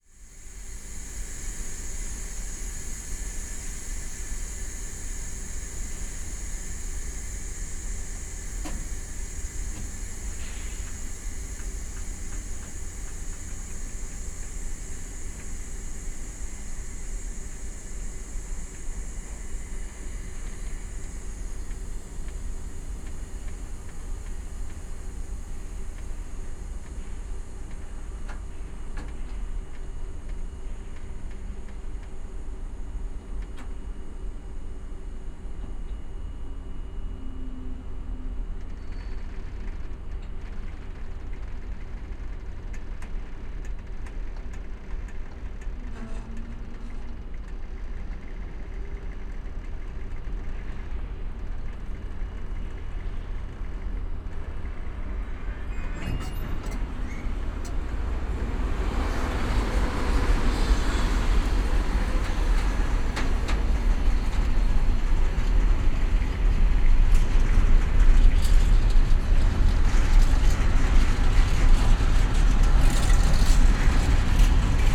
cargo train terminal, Ljubljana - train starts, sounds of power station
freight train departs, afterwards the sound of the nearby power station
(Sony PCM-D50, DPA4060)